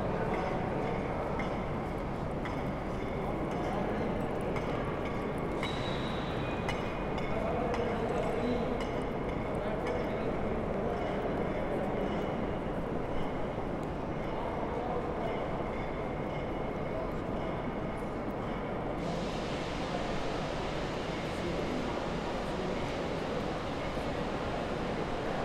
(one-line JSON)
{"title": "Frankfurt Hauptbahnhof 1 - Halle 24. April 2020", "date": "2020-04-24 15:30:00", "description": "In the hall on the 24th of April there are more voices than the last time, more people are going through the hall into the station. Is this audible? In the end of this recording a beggar is asking for money. I will meet him again at the airport, something like one hour later...", "latitude": "50.11", "longitude": "8.66", "altitude": "110", "timezone": "Europe/Berlin"}